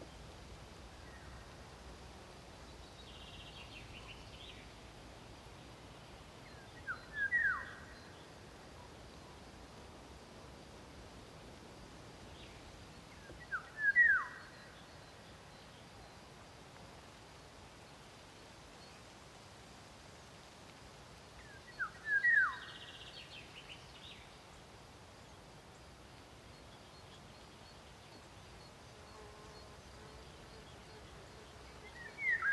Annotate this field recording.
Two, maybe three, Golden Orioles singing at each other from different positions in the landscape. The nearest one screeches once. Birch and beach leaves hiss in the breeze, a chaffinch sings in the distance and a woodpecker calls occasionally.